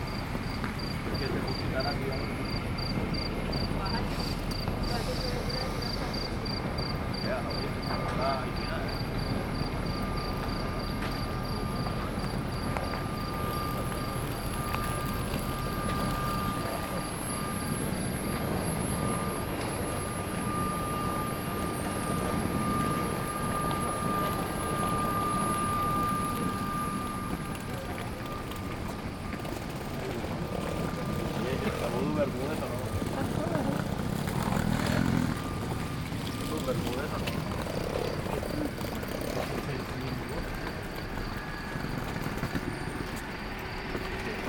Binaural recording of a leaving an airplane and going through airfield to airport buildings.
Recorded with Soundman OKM on Zoom H2n
3 November, 00:05